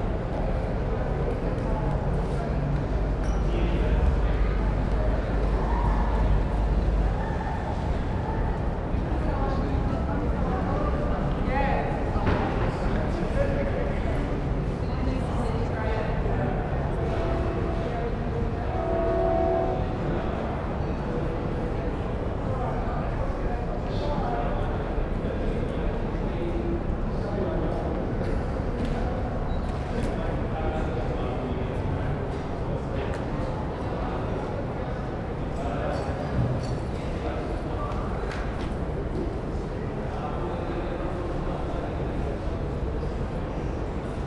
neoscenes: Nordic Film Fest reception